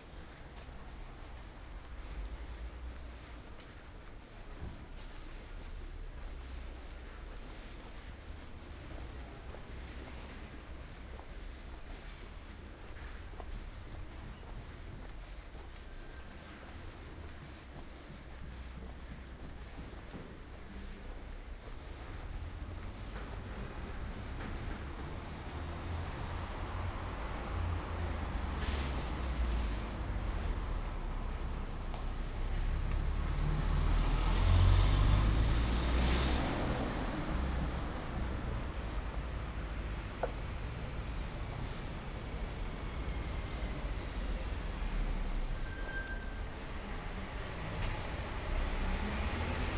“Posting postcard and market shopping at the time of covid19” Soundwalk
Chapter LXX of Ascolto il tuo cuore, città. I listen to your heart, city.
Friday May 8th 2020. Walking to mailbox to post postcard and shopping in outdoor market Piazza Madama Cristina, fifty nine days (but fifth day of Phase 2) of emergency disposition due to the epidemic of COVID19.
Start at 1:45 p.m. end at 2:17 p.m. duration of recording 32’27”
The entire path is associated with a synchronized GPS track recorded in the (kml, gpx, kmz) files downloadable here: